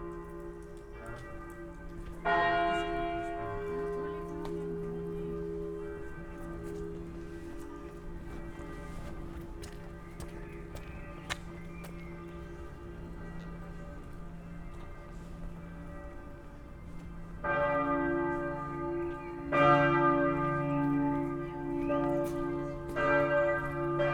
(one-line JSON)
{"title": "Limburg an der Lahn, Deutschland - various church bells, walking", "date": "2014-07-13 18:00:00", "description": "walk through the narrow streets around the Limburger Dom, 6pm churchbells from the cathedral and others\n(Sony PCM D50, DPA4060)", "latitude": "50.39", "longitude": "8.07", "altitude": "129", "timezone": "Europe/Berlin"}